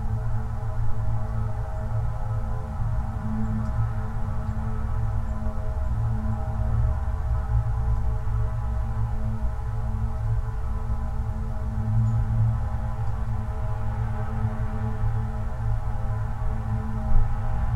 Utena, Lithuania, inside construction
small omni mics in abandoned metallic tube